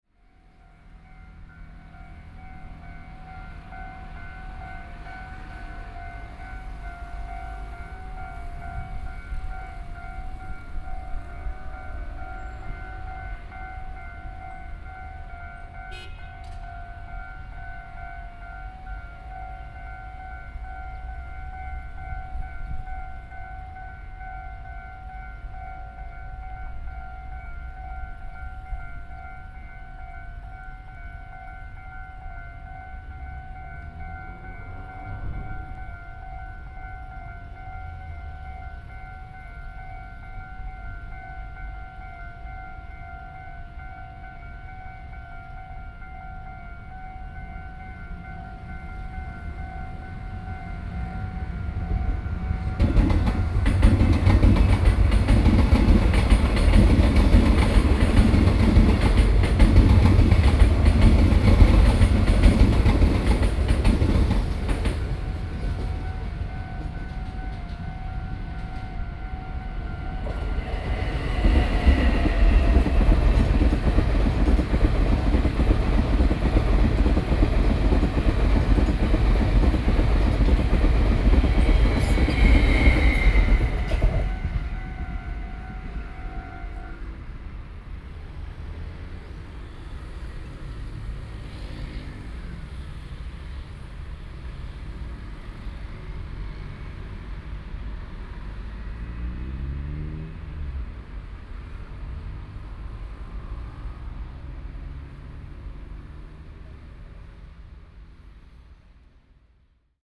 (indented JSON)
{
  "title": "Zhuangjing Rd., Neili, Zhongli City - Train traveling through",
  "date": "2013-09-16 12:38:00",
  "description": "Train traveling through, Sony PCM D50 + Soundman OKM II",
  "latitude": "24.97",
  "longitude": "121.25",
  "altitude": "120",
  "timezone": "Asia/Taipei"
}